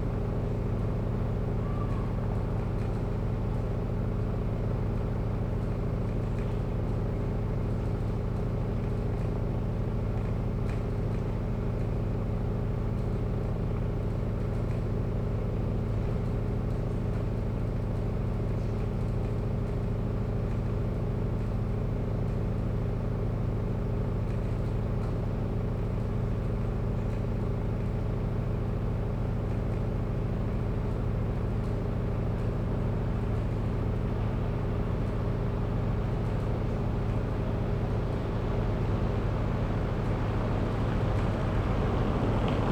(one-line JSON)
{"title": "berlin, reuterstraße: verkehrsinsel - the city, the country & me: traffic island", "date": "2010-08-28 01:49:00", "description": "generator at a construction site of a combined sewer\nthe city, the country & me: august 20, 2010", "latitude": "52.49", "longitude": "13.43", "altitude": "43", "timezone": "Europe/Berlin"}